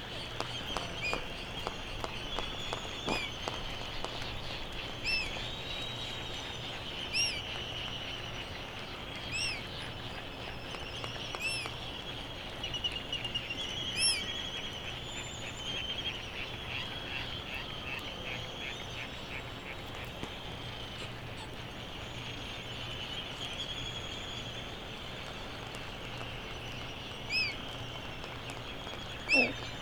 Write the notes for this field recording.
Sand Island ... Midway Atoll ... open lavalier mics ... bird calls ... laysan albatross ... white terns ... black noddy ... bonin petrels ... background noise ...